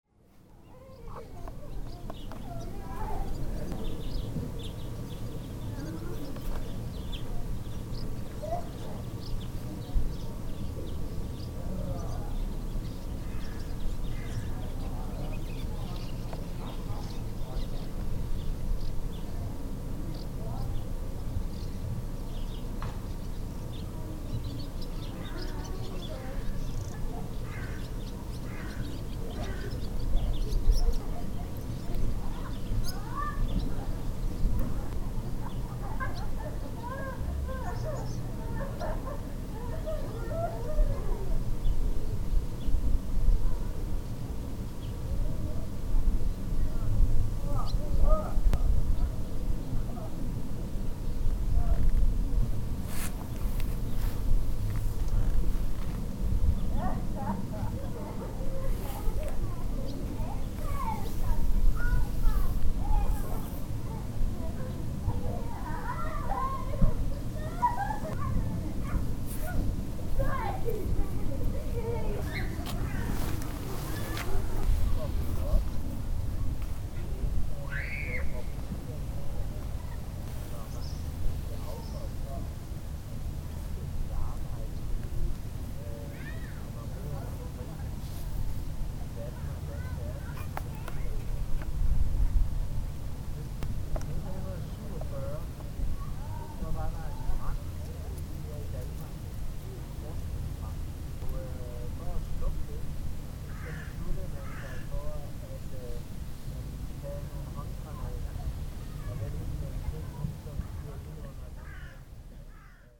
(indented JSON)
{
  "title": "kramnitse, western camp",
  "date": "2010-09-10 11:33:00",
  "description": "at the western camp - silent out of season ambience - some distant crows, some sounds of kids jumping on the nearby trampolin, a group of newcomers joining on the meadow - some wind moves\ninternational sound scapes - social ambiences and topographic field recordings",
  "latitude": "54.71",
  "longitude": "11.26",
  "altitude": "1",
  "timezone": "Europe/Copenhagen"
}